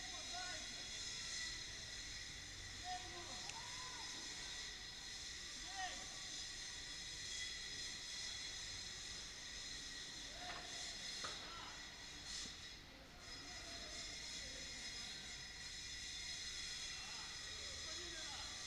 Mendělejevova, Ústí nad Labem-město-Ústí nad Labem-centrum, Czechia - construction work
A short recording with a microphone i made.
Severozápad, Česko, 26 November 2019